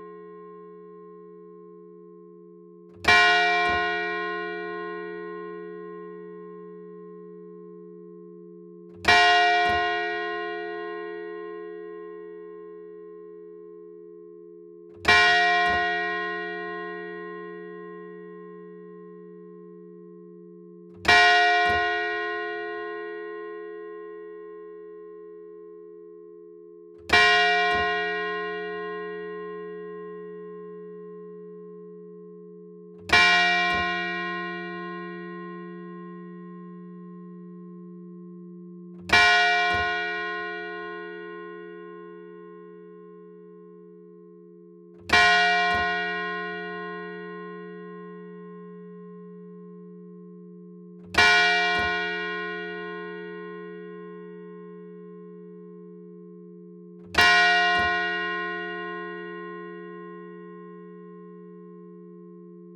Rue du Ctre, Tourouvre au Perche, France - Randonnai - Église St-Malo
Randonnai (Orne)
Église St-Malo
Le Glas
France métropolitaine, France, 6 October